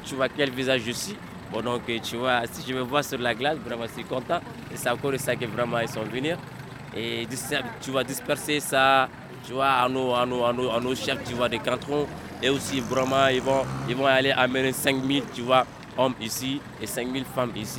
Bamako, Mali - Bamako - "Tu vois..."
Bamako - Mali
Devant la cathédrale du Sacré Cœur - rencontre inattendue
21 January 2007